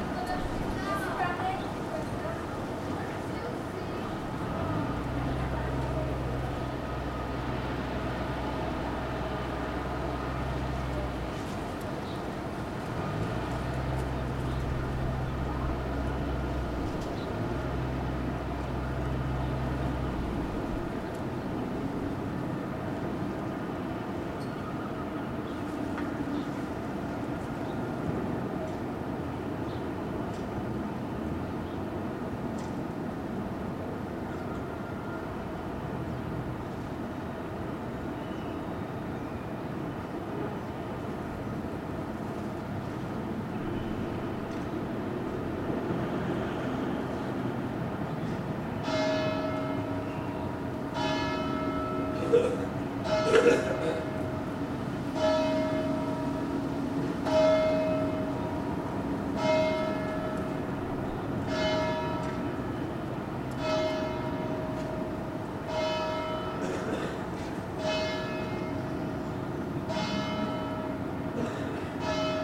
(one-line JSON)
{"title": "Midday Bells - Earl Street South, Dublin", "date": "2011-07-18 12:00:00", "description": "Church Bells, Traffic, Seagulls, Sirens, Street, Wind.", "latitude": "53.34", "longitude": "-6.28", "altitude": "20", "timezone": "Europe/Dublin"}